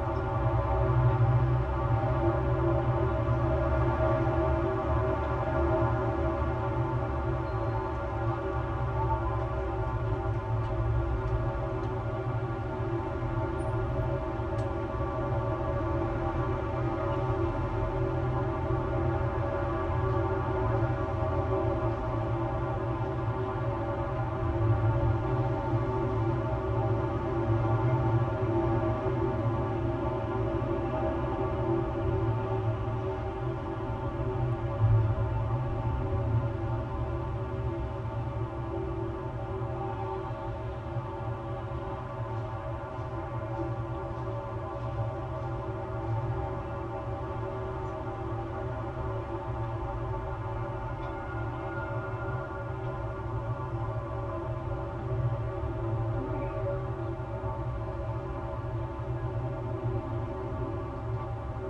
Maribor, Slovenia - one square meter: handrail support poles, first pair
a series of poles along the riverside that once supported handrails for the now-overgrown staircase down to the waters edge. the handrails are gone, leaving the poles open to resonate with the surrounding noise. all recordings on this spot were made within a few square meters' radius.
27 August 2012